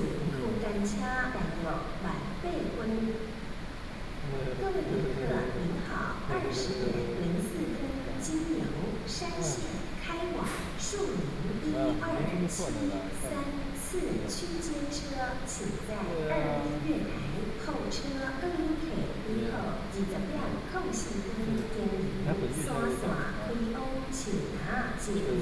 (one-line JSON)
{"title": "Wanhua Station, Taipei City, Taiwan - In the train station platform", "date": "2012-10-31 20:01:00", "latitude": "25.03", "longitude": "121.50", "altitude": "6", "timezone": "Asia/Taipei"}